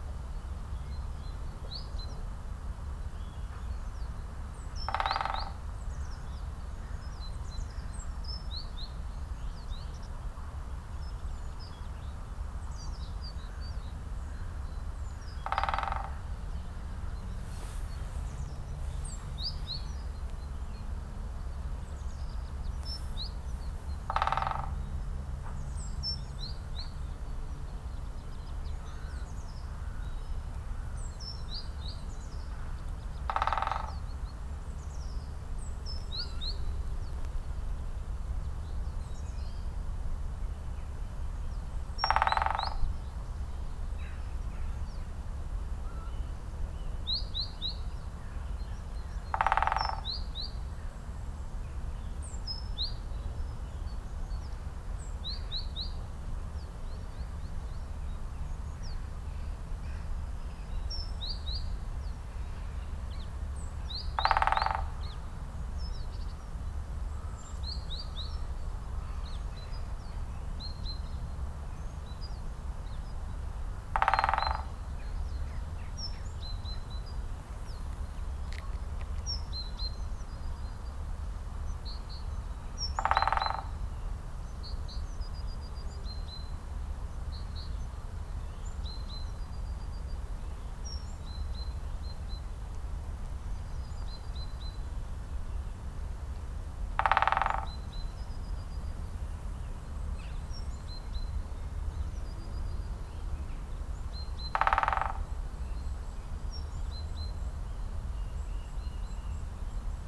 Kwartelpad, Den Haag, Nederland - Great Spotted Woodpecker
The sound of a Great Spotted Woodpecker.